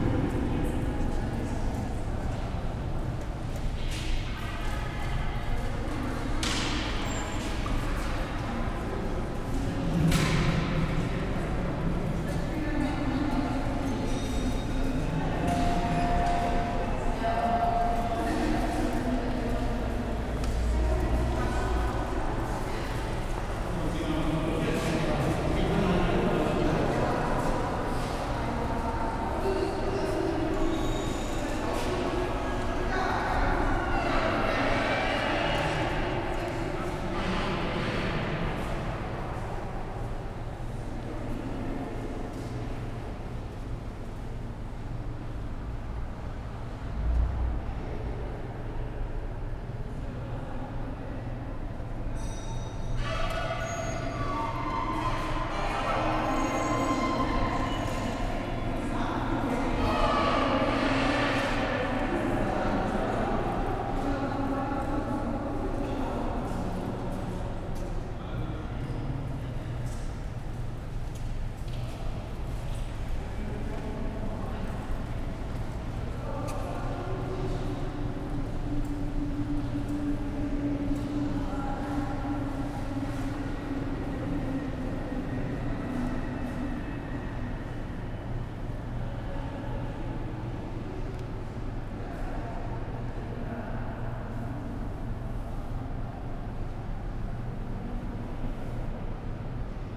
Berlin, Wannsee, S-bahn - station hall ambience
Berlin, S-Bahn station Wannsee, Saturday afternoon, station hall echos and ambience
(Sony PCM D50, DPA4060)
6 December 2014, 13:30